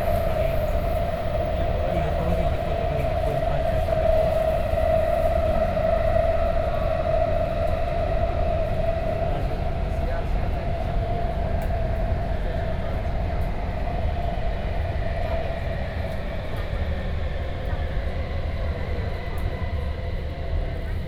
inside the MRT train, Sony PCM D50 + Soundman OKM II
20 April, ~19:00